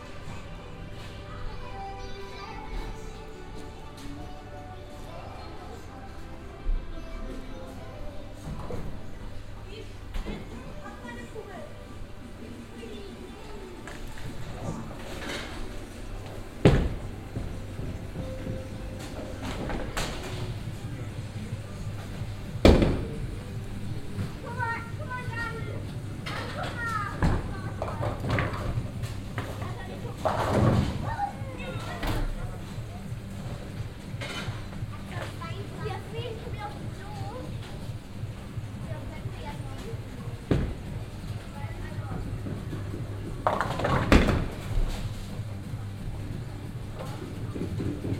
essen, berne street, game hall
Inside a game hall. First general atmosphere with music and sounds from some game console then focussing on the sound of people playing bowling within the halls basement. recorded daywise in the early afternoon.
Projekt - Klangpromenade Essen - topographic field recordings and social ambiences
Essen, Germany